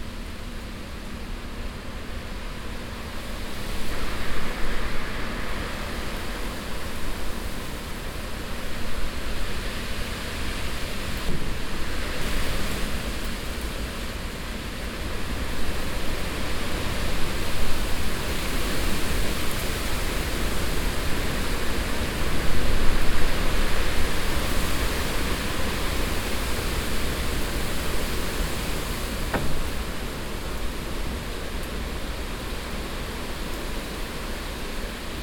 aubignan, trees and wind
In the early evening of a hot summer day. A strong wind coming up shaking the trees and leaves.
topographic field recordings - international ambiences and scapes